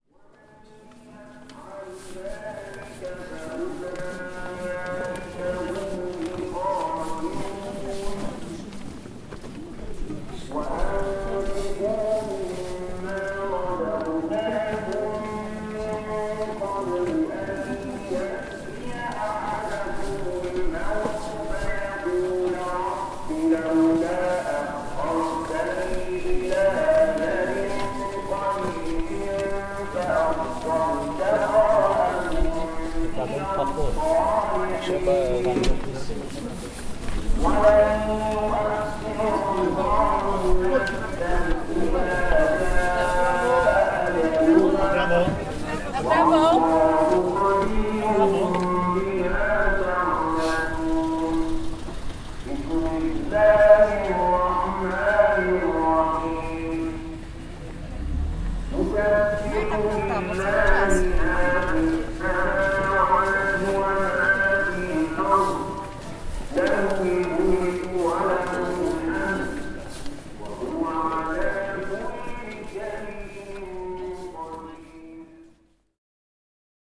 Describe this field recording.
While walking in the Arabic part of Jerusalem I stopped for a while on a little market place. People are passing by and the evening prayer is being announced. (Recorded with Zoom4HN).